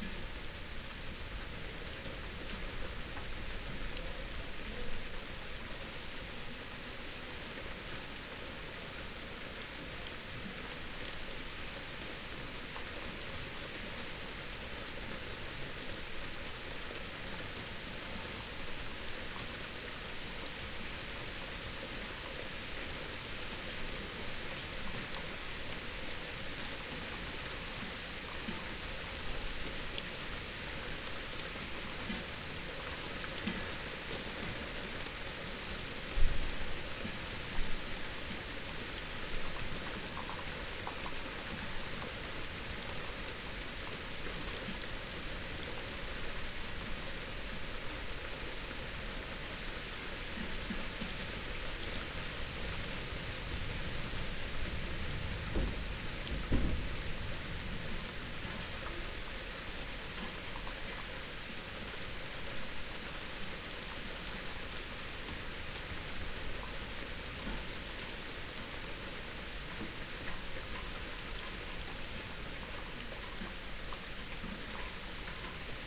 Berlin Bürknerstr., backyard window - Hinterhof / backyard ambience, summer rain
18:40 Berlin Bürknerstr., backyard window - Hinterhof / backyard ambience
Berlin, Germany, August 2022